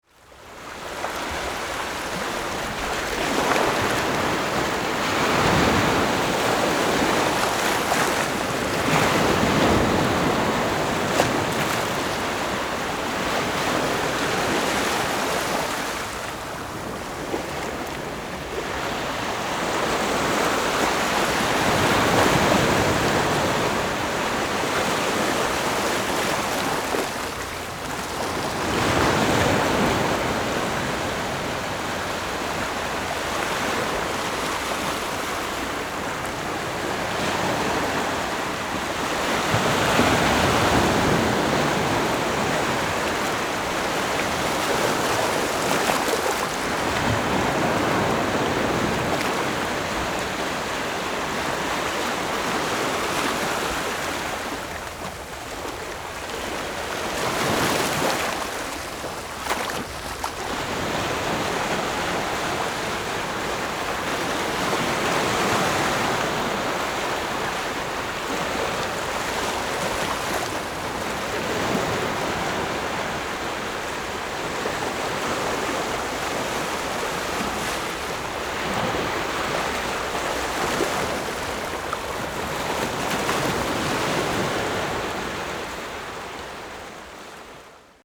Small beach, Sound of the waves, Rode NT4+Zoom H4n
11 July 2012, ~09:00